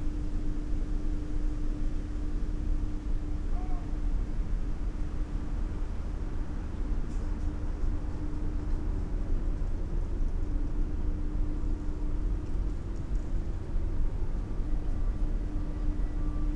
Via dell'Officina, Trieste, Italy - harbor sounds from afar
9 September 2013